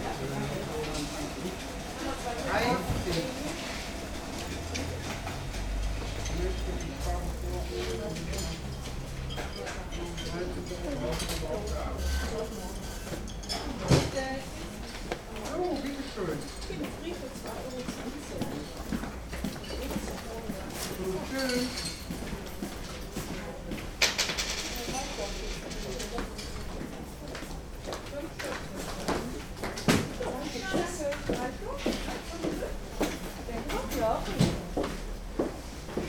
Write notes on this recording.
postal bank in the former 4711 / eau de cologne building. friday evening, closing time, still busy, and people are very kind.